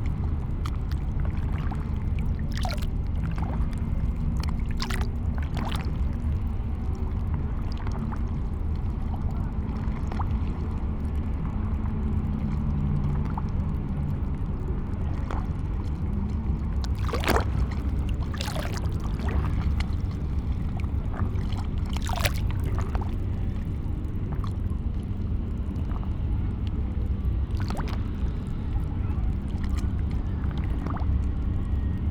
molo Audace, Trieste, Italy - gentle waves
project ”silent spaces”
5 September 2013, ~21:00